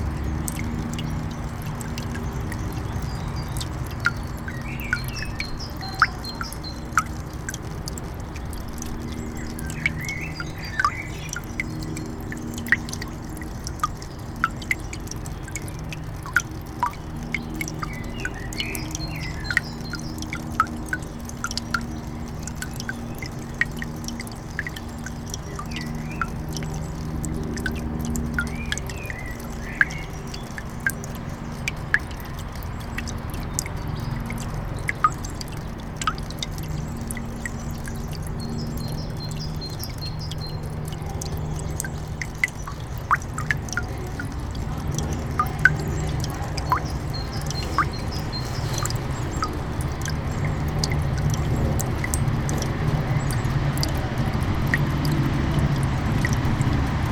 buschkrugallee: friedhof, wasserbecken, tropfender hahn - the city, the country & me: cemetery, water bassin, dropping tap
tropfender wasserhahn eines beckens zur befüllung von gießkannen
dropping tap of a small basin to fill watering cans
the city, the country & me: april 19, 2009
Germany, 24 April